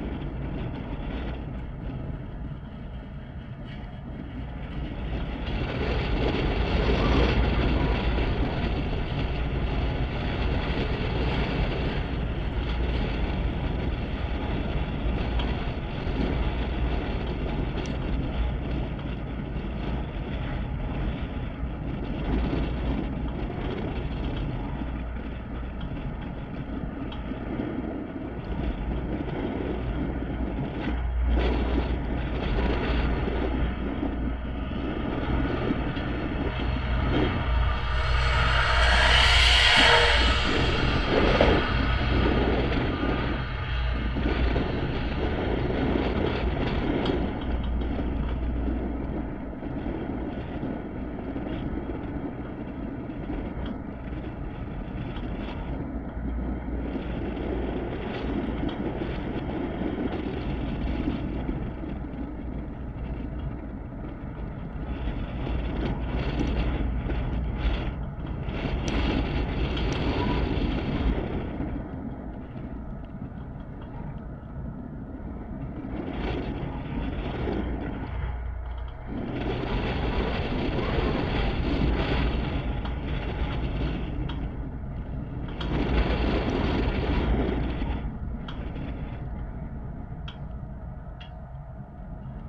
Vėžpievio g., Ringaudai, Lithuania - Street name sign in strong wind
4 contact microphone composite recording of a street sign. Strong wind is blowing against the metal plates, causing violent turbulent noises, with underlying resonant tone and noises from passing cars.